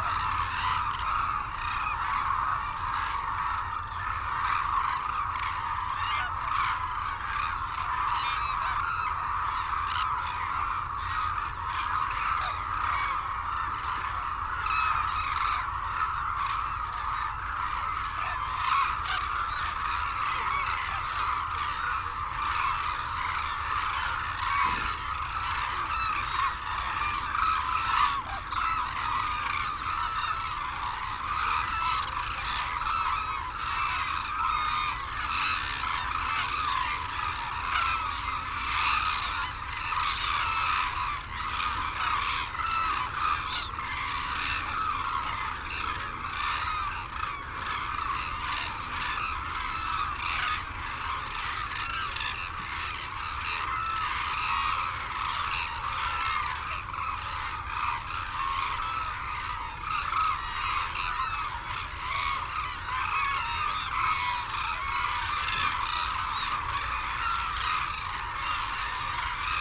Sho, Izumi, Kagoshima Prefecture, Japan - Crane soundscape ...
Arasaki Crane Centre ... Izumi ... calls and flight calls from white naped cranes and hooded cranes ... cold windy sunny ... background noise ... Telinga ProDAT 5 to Sony Minidisk ... wheezing whistles from young birds ...